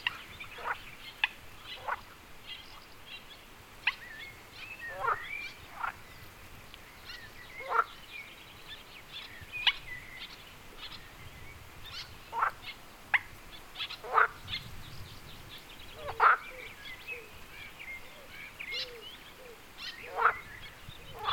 Wyspa Sobieszewska, Gdańsk, Poland - Frogs
Żaby. rec Rafał Kołacki
24 August 2016